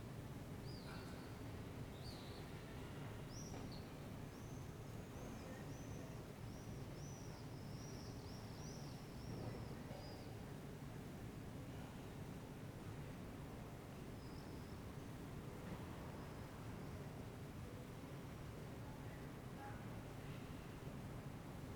Ascolto il tuo cuore, città. I listen to your heart, city. Several chapters **SCROLL DOWN FOR ALL RECORDINGS** - Terrace at sunset last April day in the time of COVID19 Soundscape
"Terrace at sunset last April day in the time of COVID19" Soundscape
Chapter LXI of Ascolto il tuo cuore, città. I listen to your heart, city
Thursday April 30th 2020. Fixed position on an internal terrace at San Salvario district Turin, fifty one after emergency disposition due to the epidemic of COVID19.
Start at 8:25 p.m. end at 8:58 p.m. duration of recording 33'33'', sunset time at 8:37 p.m.